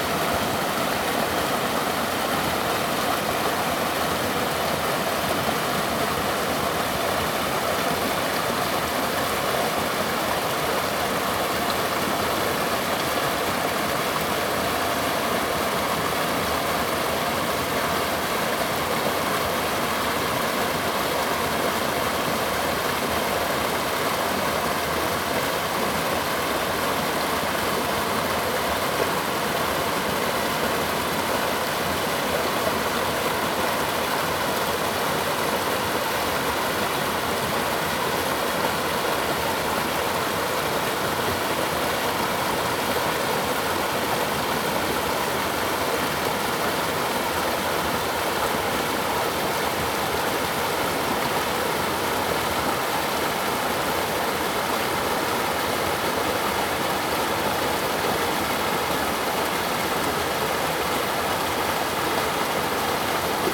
sound of the river
Zoom H2n MS+XY +Spatial audio
Puli Township, 水上巷